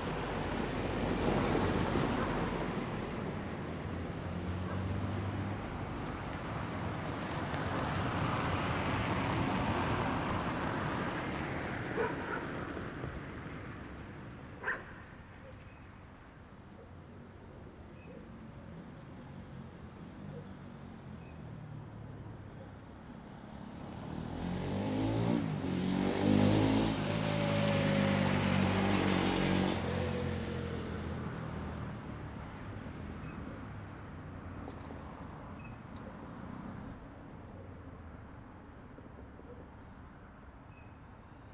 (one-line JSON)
{"title": "Dublin, Valdivia, Los Ríos, Chili - LCQA AMB VALDIVIA RESIDENTIAL EVENING CAR PASSING DOGS BARK MS MKH MATRICED", "date": "2022-08-25 19:30:00", "description": "This is a recording of a street located in Valdivia during evening. I used Sennheiser MS microphones (MKH8050 MKH30) and a Sound Devices 633.", "latitude": "-39.84", "longitude": "-73.24", "altitude": "16", "timezone": "America/Santiago"}